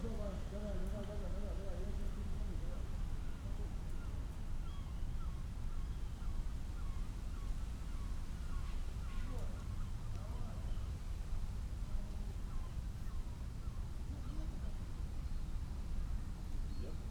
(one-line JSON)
{"title": "Tallinn, Volta", "date": "2011-07-04 23:40:00", "description": "tram station volta, tallinn. ambience at night", "latitude": "59.44", "longitude": "24.72", "timezone": "Europe/Tallinn"}